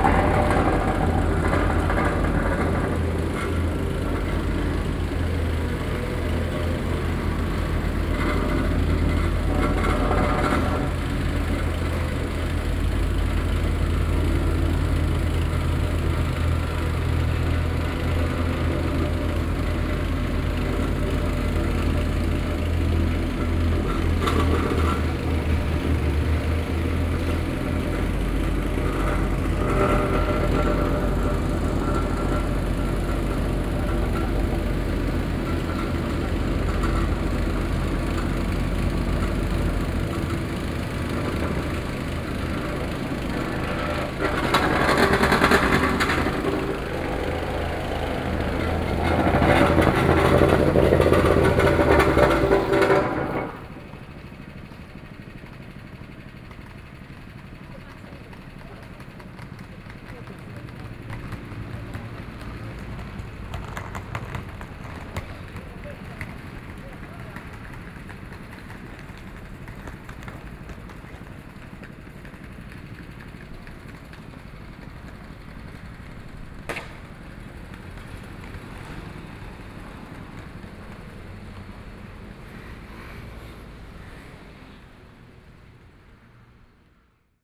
Fuxinggang, Taipei - Construction noise
Construction noise, Being filled and paved road, Binaural recordings, Sony PCM D50 + Soundman OKM II